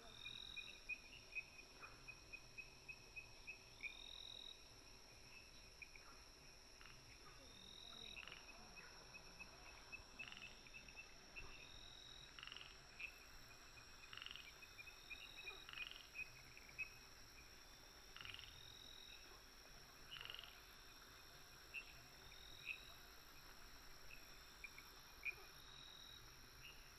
Yuchi Township, Nantou County - Firefly habitat area
Dogs barking, Frogs chirping, Firefly habitat area
Puli Township, 華龍巷, 29 April 2015